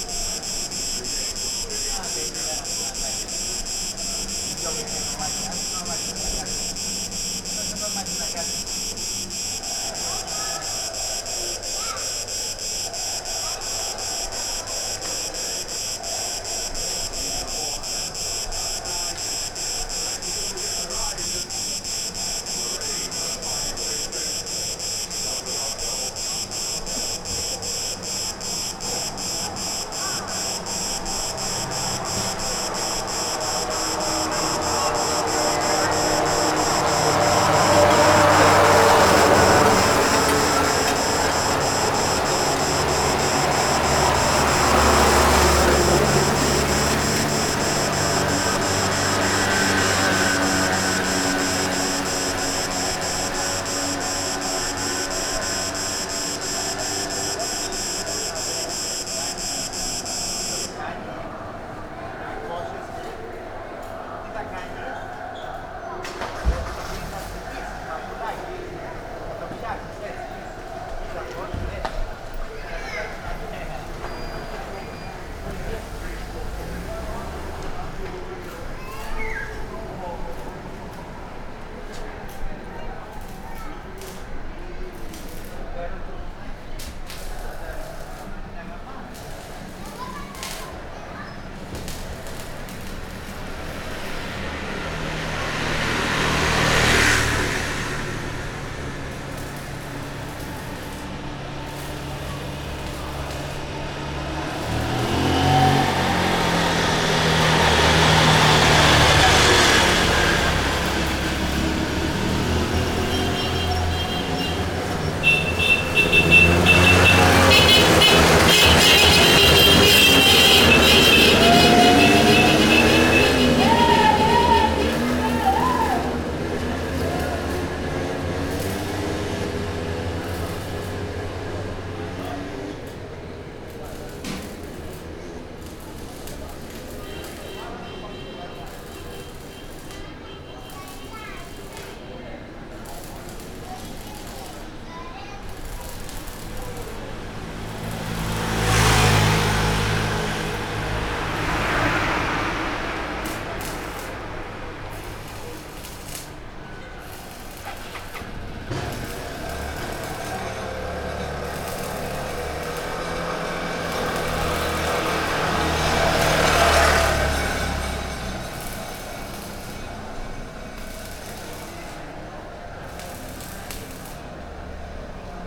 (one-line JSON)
{"title": "Stalida, main promenade - welding, soccer and scooters", "date": "2012-09-30 13:06:00", "description": "loud cicada in a tree, a man welding the the railing of his balcony, soccer game on TV in a nearby bar, tourists cursing bikes along the promenade", "latitude": "35.29", "longitude": "25.43", "altitude": "5", "timezone": "Europe/Athens"}